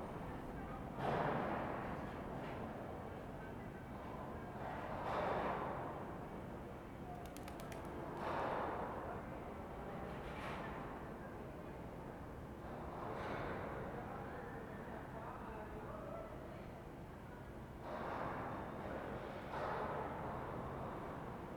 {"title": "Ascolto il tuo cuore, città. I listen to your heart, city. Several chapters **SCROLL DOWN FOR ALL RECORDINGS** - \"Terrace mid January afternoon, in seclusion in the time of COVID19\": Soundscape", "date": "2022-01-14 13:46:00", "description": "\"Terrace mid-January afternoon, in seclusion in the time of COVID19\": Soundscape\nChapter CLXXXV of Ascolto il tuo cuore, città. I listen to your heart, city\nFriday, January 14th, 2022. Fixed position on an internal terrace at San Salvario district Turin, About second recording of 2022 and first recording being myself in seclusion as COVID 19 positive\nStart at 1:16 p.m. end at 1:46 p.m. duration of recording 29'37''.\nPortable transistor radio tuned on RAI-RadioTre acts as a time and place marker.", "latitude": "45.06", "longitude": "7.69", "altitude": "245", "timezone": "Europe/Rome"}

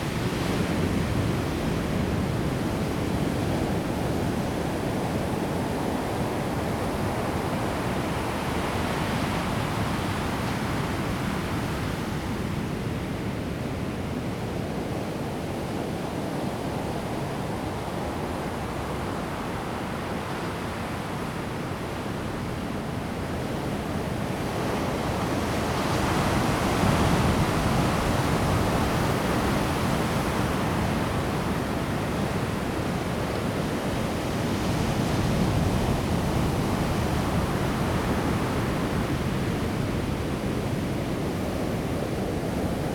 {"title": "牡丹灣, Mudan Township - In the bay", "date": "2018-04-02 12:43:00", "description": "In the bay, Sound of the waves\nZoom H2n MS+XY", "latitude": "22.20", "longitude": "120.89", "altitude": "3", "timezone": "Asia/Taipei"}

